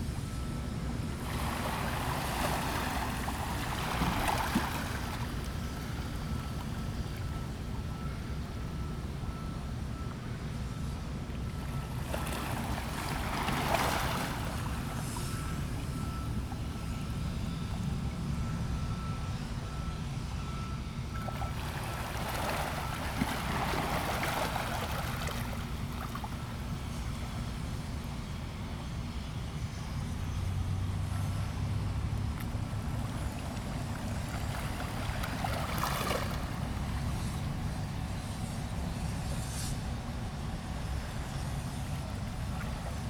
和平島, Keelung City - On the coast
Sound of the waves, Shipbuilding Factory Sound
Zoom H2n MS+XY +Sptial Audio